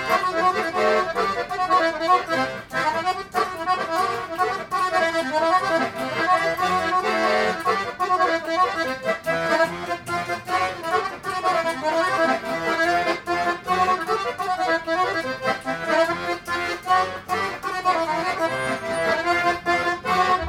{"title": "Moris, Saint-Gilles, Belgique - Accordionist in the tram 97", "date": "2022-03-26 16:30:00", "description": "Accordéoniste dans le tram 97.\nTech Note : Ambeo Smart Headset binaural → iPhone, listen with headphones.", "latitude": "50.82", "longitude": "4.35", "altitude": "79", "timezone": "Europe/Brussels"}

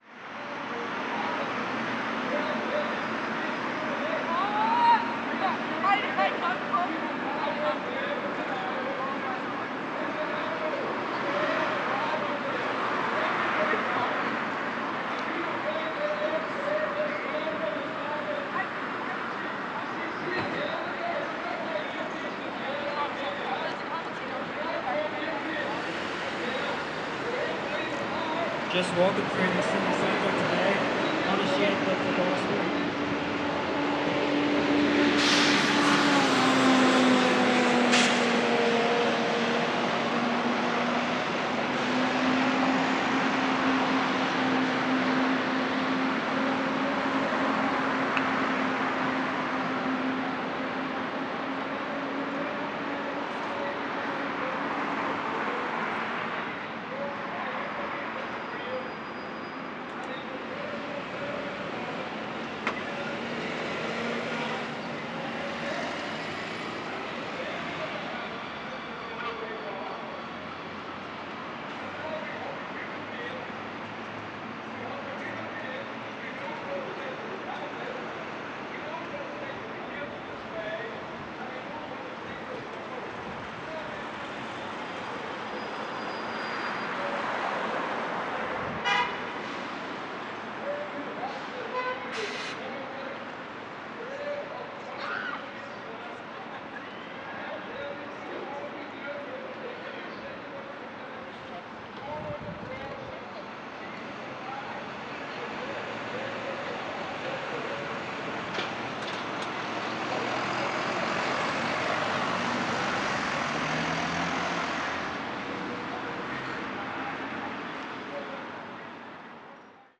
{"title": "Donegall Square N, Belfast, UK - Belfast City Hall", "date": "2021-03-27 17:05:00", "description": "Recording of a group of gospel preachers in the distance, vehicles, pedestrians, children playing around, and a bit of birds.", "latitude": "54.60", "longitude": "-5.93", "altitude": "14", "timezone": "Europe/London"}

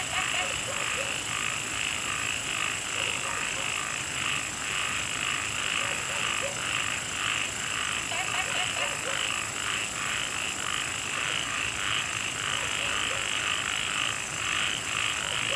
{"title": "茅埔坑溪生態公園, 埔里鎮桃米里 - Frogs chirping", "date": "2015-08-10 21:17:00", "description": "Frogs chirping, Dogs barking, in the Wetland Park\nZoom H2n MS+XY", "latitude": "23.94", "longitude": "120.94", "altitude": "470", "timezone": "Asia/Taipei"}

